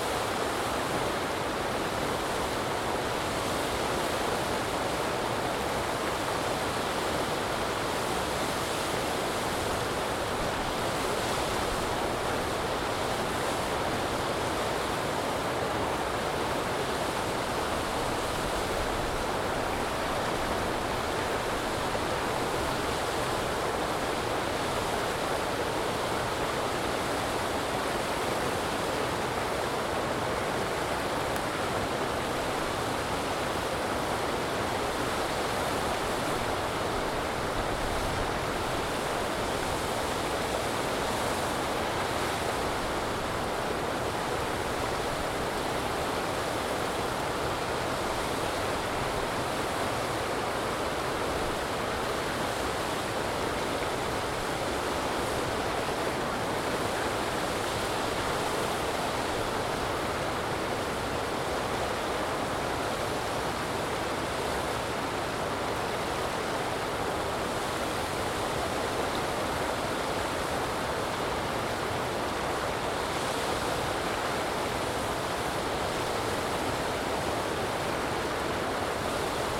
Miklinovec ul., Koprivnica, Croatia - Koprivnica waterfall
Concrete man-made waterfalls. Recorded with Zoom H2n (XY, gain on 10, on a small tripod, handheld) from the northern bank.
Koprivničko-križevačka županija, Hrvatska, 21 March 2021, 10:44